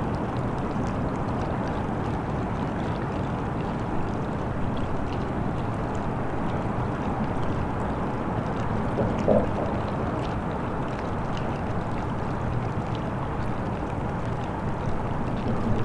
Under Langevin Bridge, Calgary, AB, Canada - Bow River
The river was partially frozen so like the idiot i am, i sat on the rocks and placed the recorder on the ice. Weird night river. Also, I thought someone was behind me the entire time I was there, but there were only geese.
Zoom H4N Recorder